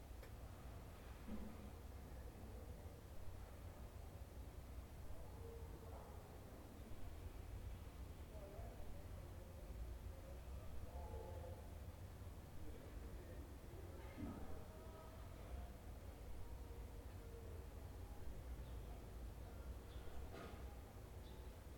Milano, Italia - il cortile sul retro
cortile molto calmo, cinguettii
Milano, Italy